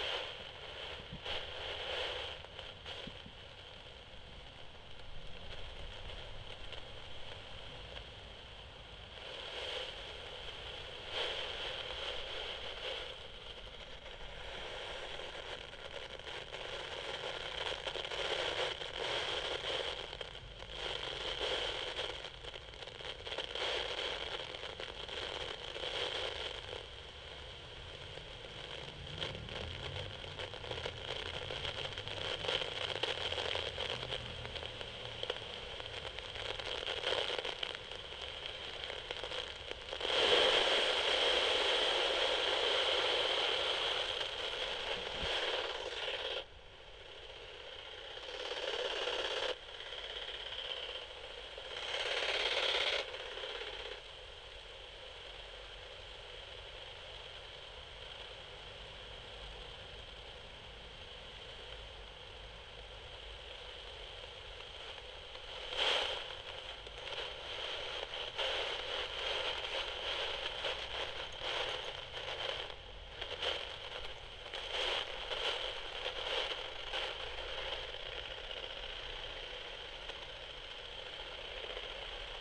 Poznan, Wilda district, yard of closed car school - high frequencies
walking around tall grass and bushes with a high frequency detector set to about 31kHz. Picking up the sound of feet going through the grass, grasshoppers chirping, some high notes of bird calls get registered too. so it's a mix of high frequencies and some of the ambience of the yard that the mics picked up anyway.